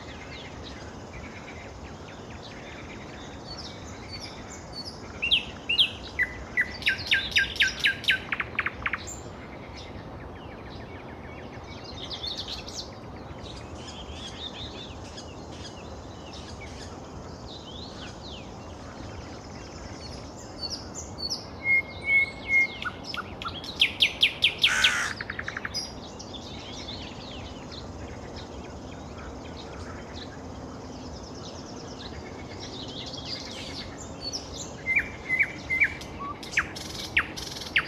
Moscow, Shipilovskyi pr. - Morning Birds, Frogs and Train
Morning, Birds, Frogs, Train, Street Traffic